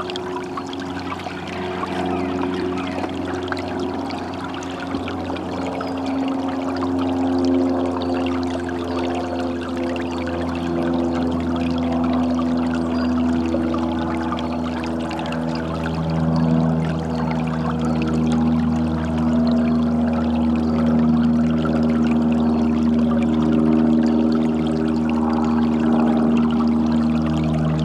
Colchester, Colchester, Essex, UK - Babbling Brook
made in friday woods on Saturday 27th of February 2015. Cold day, little bit of wind around 3.45pm
27 February 2015, 15:45